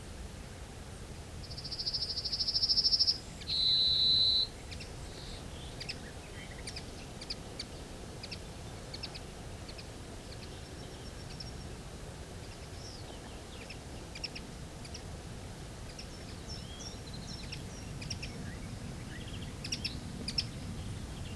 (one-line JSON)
{"title": "Ottignies-Louvain-la-Neuve, Belgique - Yellowhammer", "date": "2017-07-16 12:15:00", "description": "Walking threw the wheat fields, the song of the Yellowhammer. In our area, it's the very symbolic bird song of an hot summer in beautiful fields.", "latitude": "50.68", "longitude": "4.51", "altitude": "129", "timezone": "Europe/Brussels"}